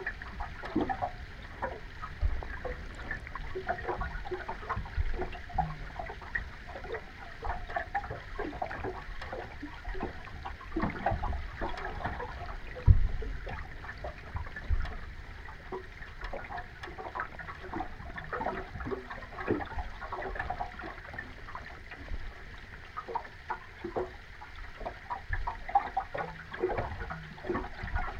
{"title": "Maneiciai, Lithuania, underwater listening", "date": "2021-04-09 14:30:00", "description": "Stormy day. Hydrophone in the water near some water pipe.", "latitude": "55.61", "longitude": "25.73", "altitude": "141", "timezone": "Europe/Vilnius"}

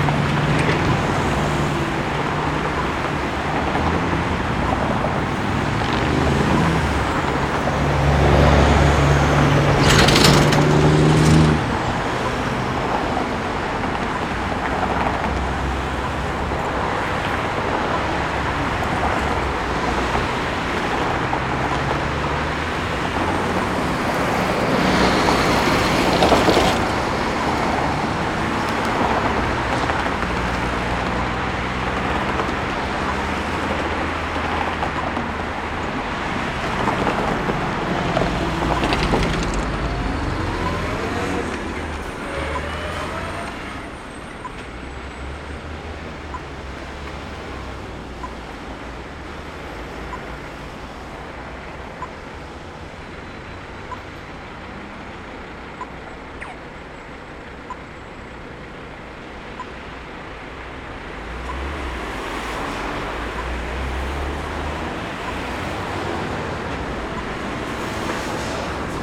{"title": "Corner of Alexandra Parade and Nicholson St, Carlton - Part 1 of peculiar places exhibition by Urban Initiatives; landscape architects and urban design consultants", "date": "2010-08-16 17:48:00", "description": "peculiar places exhibition, landscape architecture, urban, urban initiatives", "latitude": "-37.79", "longitude": "144.98", "timezone": "Australia/Melbourne"}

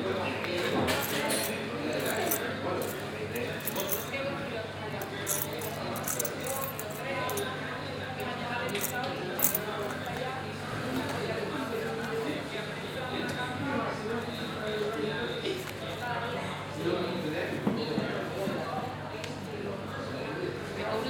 {"title": "Sevilla, Provinz Sevilla, Spanien - Sevilla - Pizzeria Uoni", "date": "2016-10-09 15:13:00", "description": "At the Pizzeria Uoni. The sound of the location while people order pizza slices and drinks.\ninternational city sounds - topographic field recordings and social ambiences", "latitude": "37.40", "longitude": "-5.99", "altitude": "15", "timezone": "Europe/Madrid"}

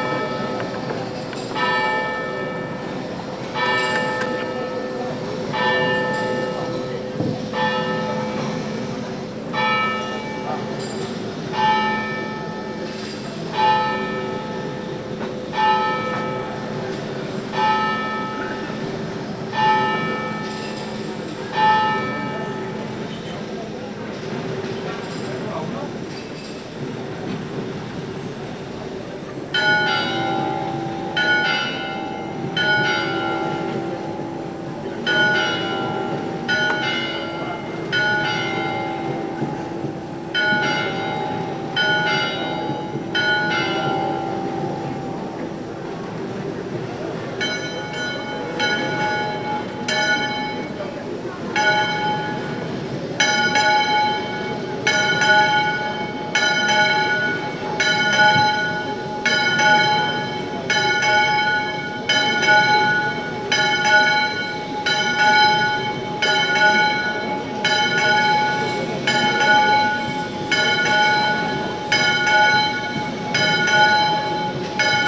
{"title": "Nerja, Spain: Church of El Salvador", "description": "Noon bell of the Church of El Salvador, Nerja, Spain", "latitude": "36.75", "longitude": "-3.88", "altitude": "21", "timezone": "Europe/Berlin"}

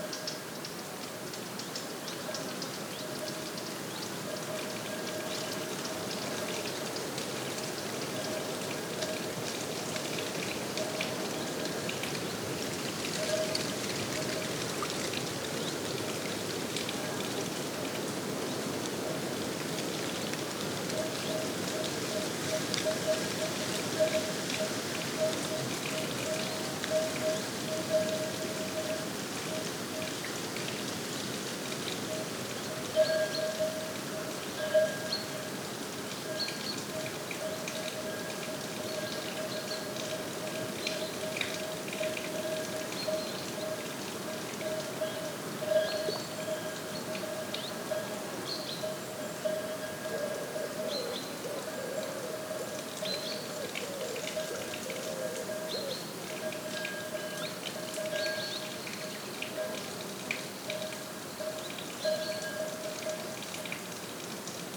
{"title": "SBG, Font del Vicari - Mañana", "date": "2011-08-14 11:00:00", "description": "Paisaje sonoro matutino en la fuente. Variedad de cantos y llamadas de aves, muchos insectos y unas vacas a cierta distancia.", "latitude": "41.99", "longitude": "2.19", "altitude": "836", "timezone": "Europe/Madrid"}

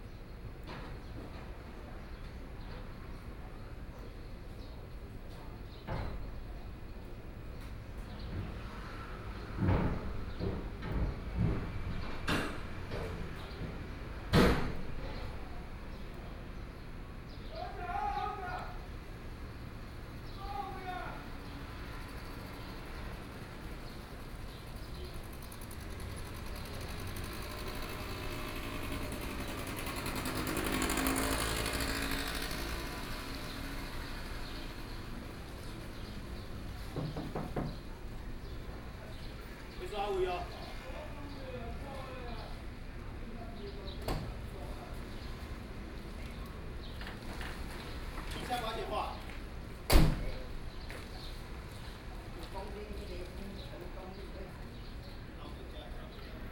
{"title": "天成旅社, 宜蘭市新興里 - Truck unloading", "date": "2014-07-27 09:26:00", "description": "At the roadside, Truck unloading, Traffic Sound\nSony PCM D50+ Soundman OKM II", "latitude": "24.76", "longitude": "121.76", "altitude": "16", "timezone": "Asia/Taipei"}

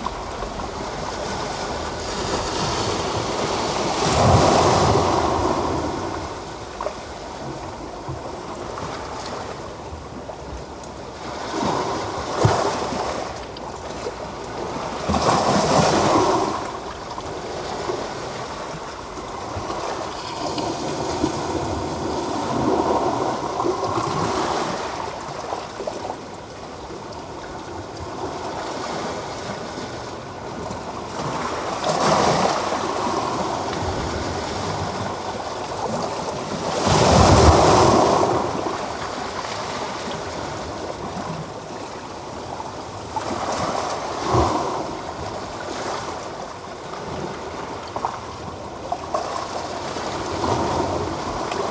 {"title": "Phaselis, Turkey - At the Edge of Phaselis and the Sea", "date": "2018-12-20 12:33:00", "description": "Recorded with a Sound Devices MixPre-3 and a pair of DPA 4060s.", "latitude": "36.52", "longitude": "30.55", "altitude": "1", "timezone": "Europe/Istanbul"}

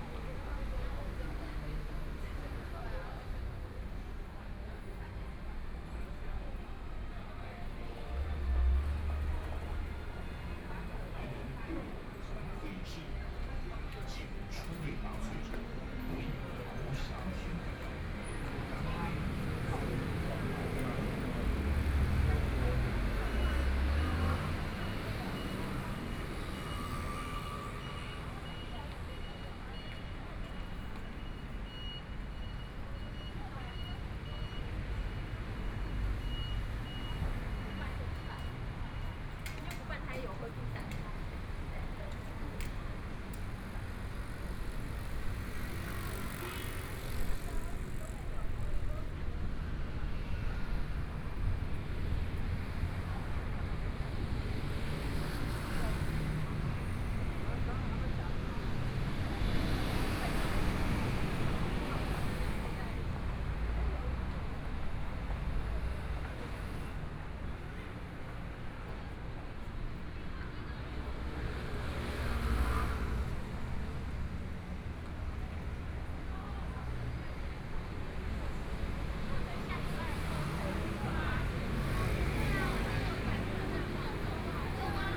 {"title": "中山區, Taipei City - Walking", "date": "2014-01-20 13:07:00", "description": "Walking on the road （ZhongShan N.Rd.）from Jinzhou St to Nanjing E. Rd., Traffic Sound, Binaural recordings, Zoom H4n + Soundman OKM II", "latitude": "25.05", "longitude": "121.52", "timezone": "Asia/Taipei"}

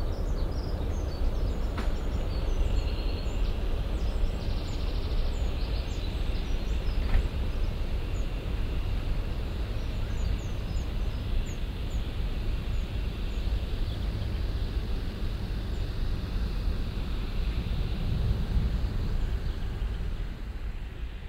{"title": "cologne, south, friedenspark, kindergarden - cologne, sued, friedenspark, kindergarten", "date": "2008-05-21 16:04:00", "description": "morgendliches ambiente im friedenspark, kindergartenbesucher, strassenverkehr, vögel, jogger und ein zug\nsoundmap: cologne/ nrw\nproject: social ambiences/ listen to the people - in & outdoor nearfield recordings", "latitude": "50.92", "longitude": "6.97", "altitude": "50", "timezone": "Europe/Berlin"}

